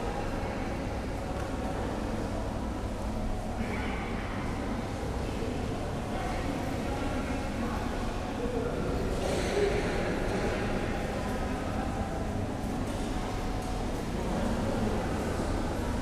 Oldenburg Hbf - main station, hall ambience
Oldenburg Hbf, main station, hall ambience
(Sony PCM D50, DPA4060)
Oldenburg, Germany, 14 September, ~14:00